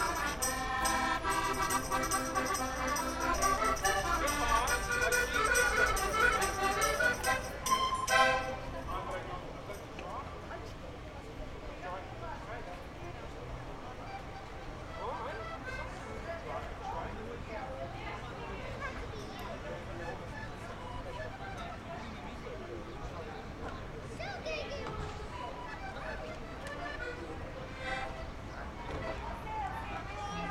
Estacao da Regua, Portugal. Mapa Sonoro do rio Douro. Peso da Reguas railway station. Douro River Sound Map